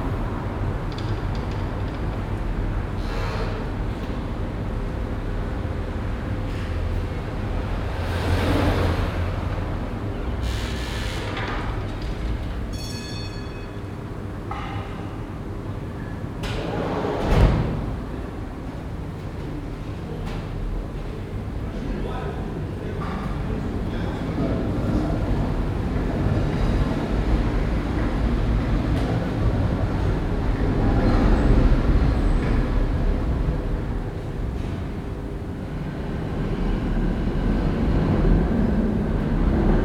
Quiet passage way ambience
early evening in a Prague passage way.